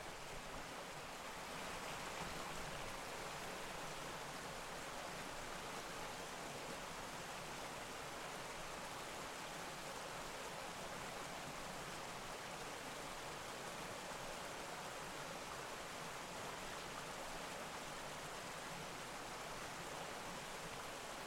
Březinovy sady, Jihlava, Česko - plameňáci v noci
zoo pozdě večer, občas se ozve nějaká šelma, ale hlavně plameňáci nemůžou usnout